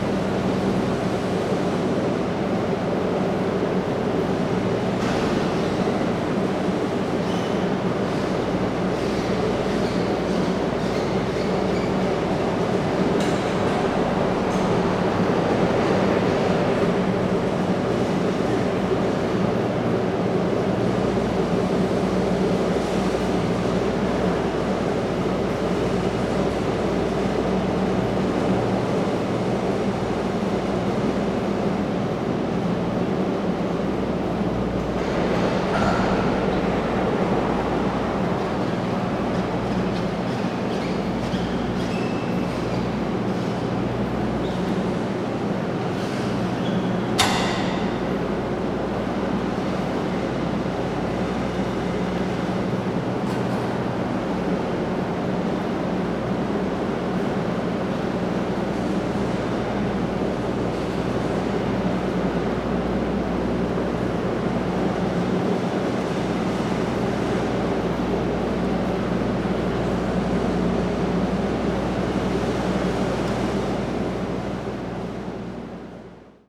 Monte, cableway station - inside the cableway station
drone of machinery in the cableway station. cableway cars arriving every few seconds.